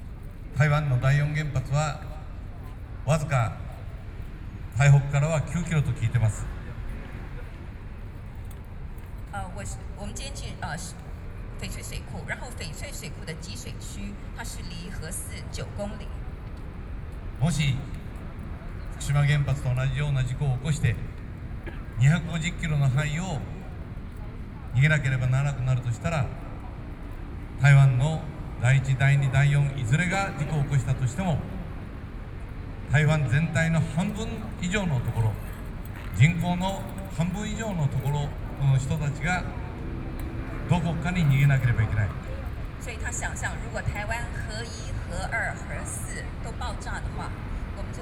Liberty Square, Taipei - Speech - anti-nuclear
Former Prime Minister of Japan （Mr. Naoto Kan かん なおと）, Speech on anti-nuclear stance and the Japanese experience of the Fukushima Daiichi nuclear disaster, Sony PCM D50 + Soundman OKM II
Zhongzheng District, Taipei City, Taiwan, 13 September 2013